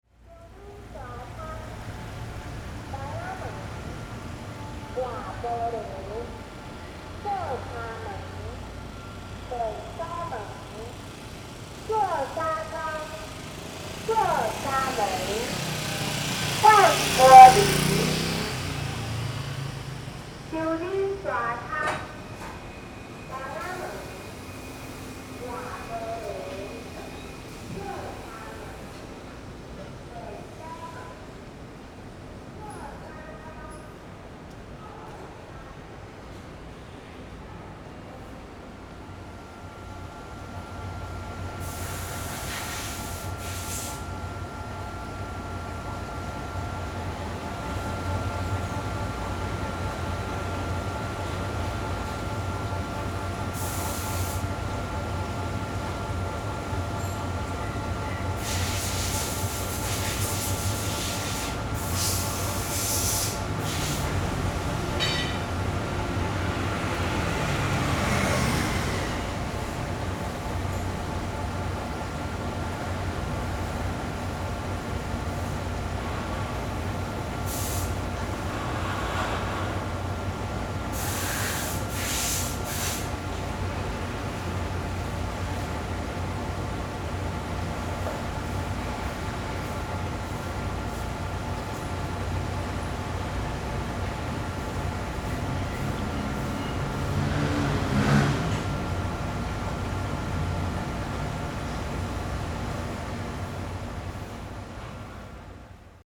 Ln., Fuying Rd., Xinzhuang Dist. - Small alley
Small alley, Sound from Factory, Traffic Sound
Zoom H4n +Rode NT4